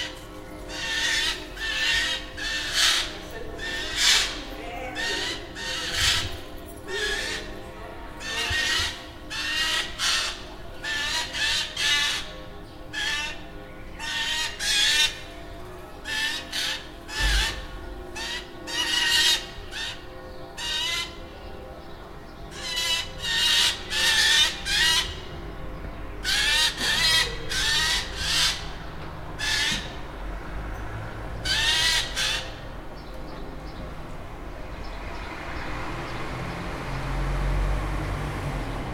Gießen, Deutschland - Vogel und Glocken
A seemingly angry bird, two men greeting each other, in the background church bells ringing and one of the inevitable garden machines passing by. Recorded with a Zoom H4
Giessen, Germany, 1 June